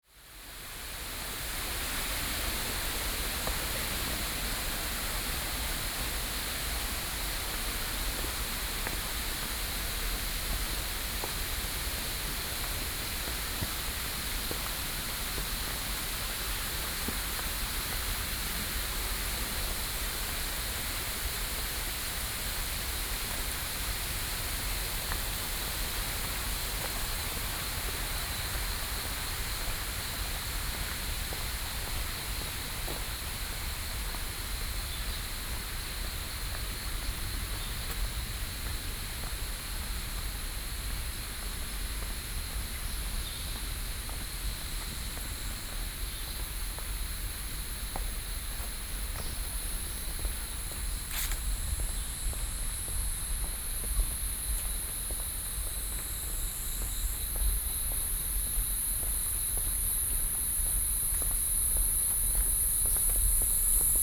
Tianmu, Shilin District - Hiking trails
Trail, Stream flow of sound, Cicadas, Frogs calling, Sony PCM D50 + Soundman OKM II
新北市 (New Taipei City), 中華民國, June 2013